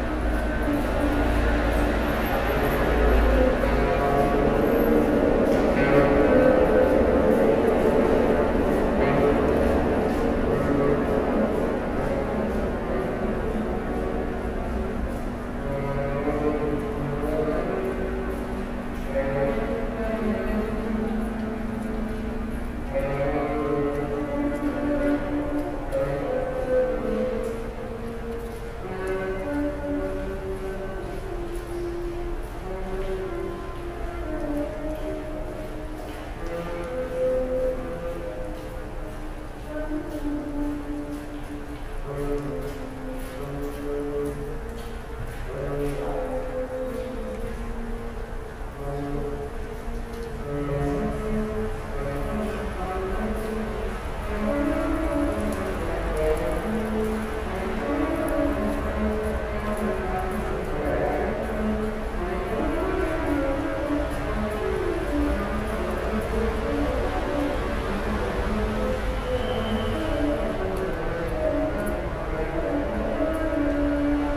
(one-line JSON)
{"title": "Alexanderplatz, Tunnel under s-bahn track - Tunnel under s-bahn track", "date": "2010-02-02 13:07:00", "description": "(Pipes, someone playing something, binaurals)", "latitude": "52.52", "longitude": "13.41", "altitude": "41", "timezone": "Europe/Berlin"}